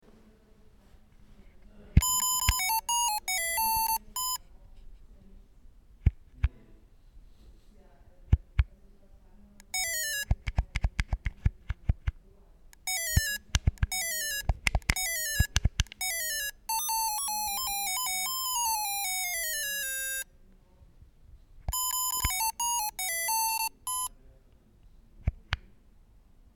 16.02.2009 14:00 billiges mcdonalds werbegeschenk / cheap mcdonalds giveaway
Berlin, Deutschland, February 2009